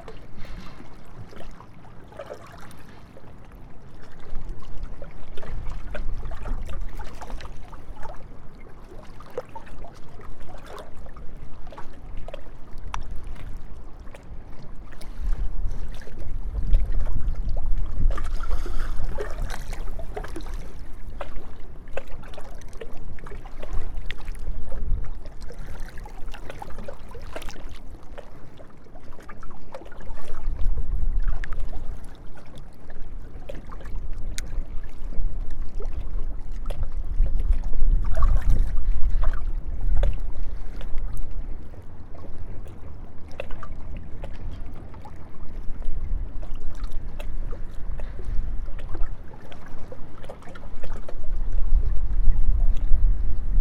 Vistula perspective, Kraków, Poland - (744) Water Atmosphere
Recording of an atmosphere on a windy day from the perspective as close to the river as possible (excluding hydrophones options...). Easter Monday afternoon.
Recorded with Tascam DR100 MK3.